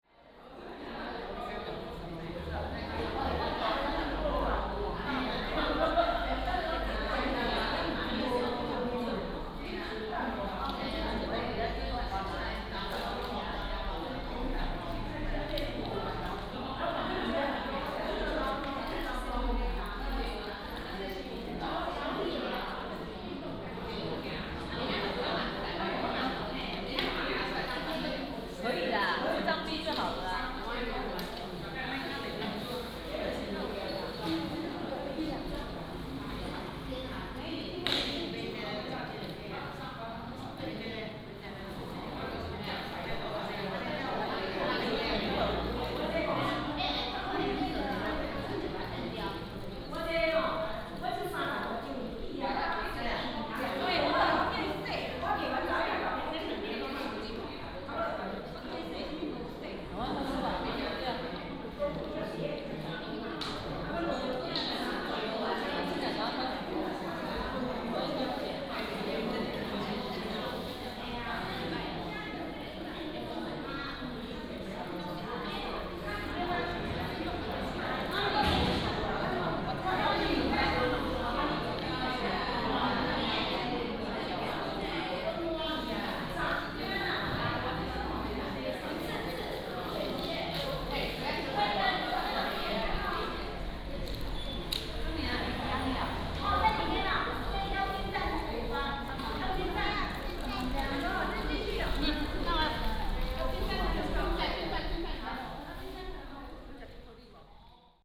Sanyi Station, Miaoli County - In the station hall
In the station hall, Traffic sound, Many women
Miaoli County, Sanyi Township, 16 February 2017